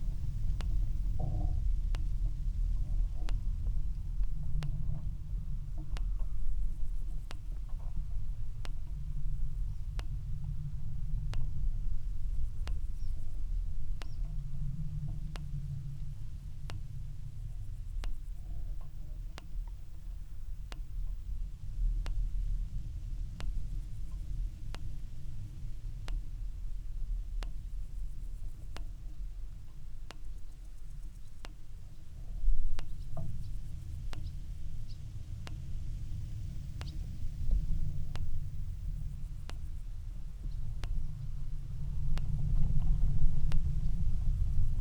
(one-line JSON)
{"title": "Vyzuonos, Lithuania, electric fencing", "date": "2017-08-06 17:40:00", "description": "4 channels recording at the electric fencing system. surrounding soundscape and clicks and drones caoptured by contact microphones", "latitude": "55.57", "longitude": "25.51", "altitude": "94", "timezone": "Europe/Vilnius"}